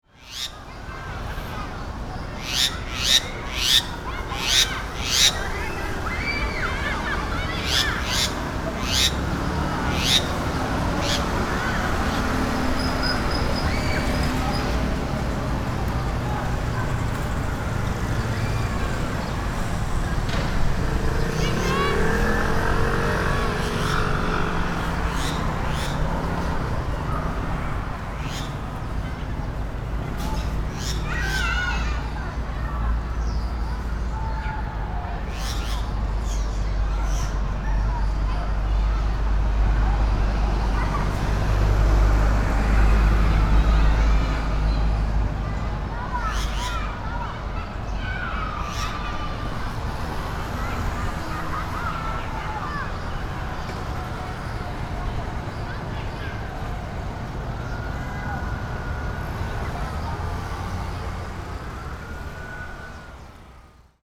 Kaohsiung Municipal Fuh-Hsing Primary School - Standing under a big tree
Standing next to Primary school, Students are playing games, Standing under a big tree.Sony PCM D50
高雄市 (Kaohsiung City), 中華民國, 5 April, 15:12